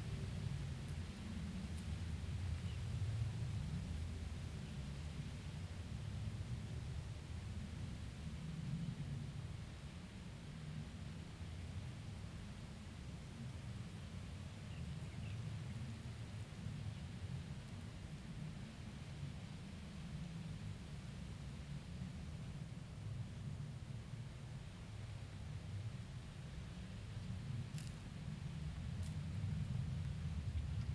大富村, Guangfu Township - Small village
Birdsong, Traffic Sound, Next to farmland, The sound of distant aircraft, Small village
Zoom H2n MS+ XY